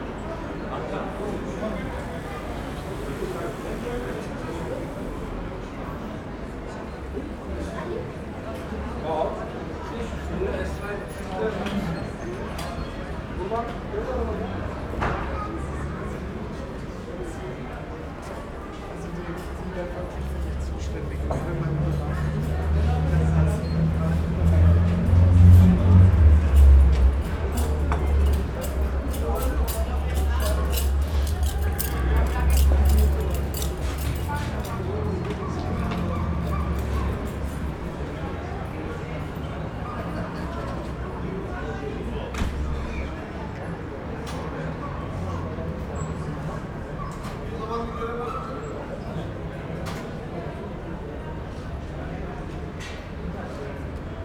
Berlin, Kotti, Bodegga di Gelato - Bodegga di Gelato, friday night coffee break
29.05.2009 short coffee break in front of the bodegga, noise of a balcony party on the 1st floor in background.